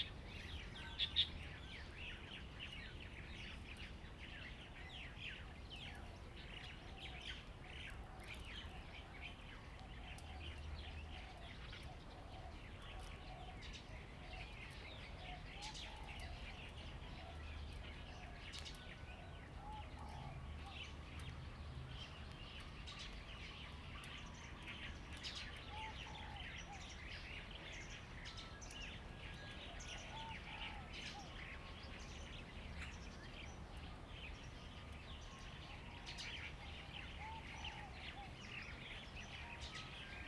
鐵漢堡, Lieyu Township - Abandoned military sites
Birds singing, Traffic Sound, Abandoned military sites
Zoom H2n MS+XY
金門縣 (Kinmen), 福建省, Mainland - Taiwan Border, 4 November 2014, 08:34